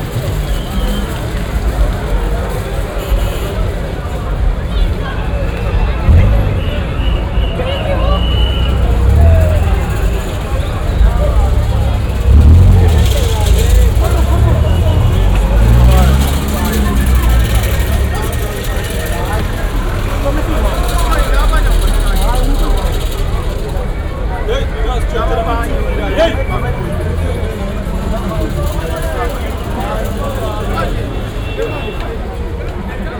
Hillbrow, Johannesburg, South Africa - Eviction
Eviction without relocation of tenants. ‘Jozi my Jozi’ field recording of an eviction in process on quartz street downtown.